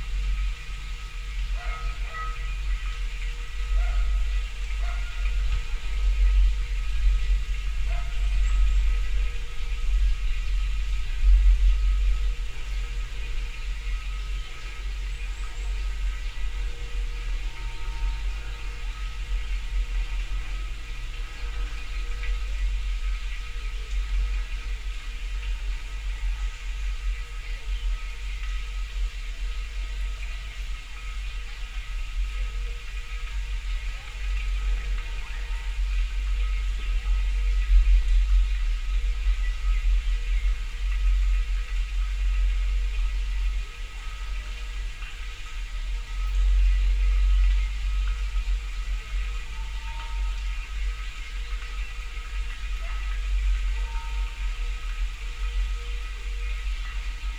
항아리들 속에 within clay urns
among a collection of clay urns beside a hanok in the Damyang bamboo forest area...2 narrow mouth-piece water jugs...